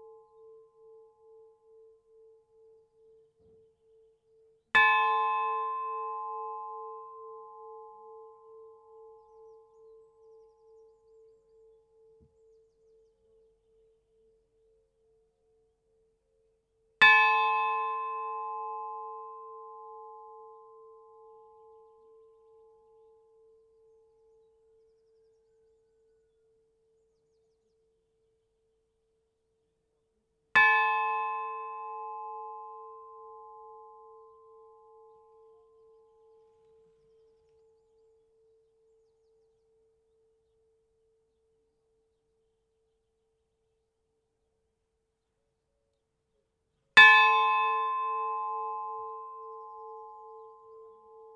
Rue Roger Salengro, Millonfosse, France - MillonFosse - Département du Nord - Le Calvaire - Tintement.
MillonFosse - Département du Nord
Le Calvaire.
Tintement.
19 April, 14:00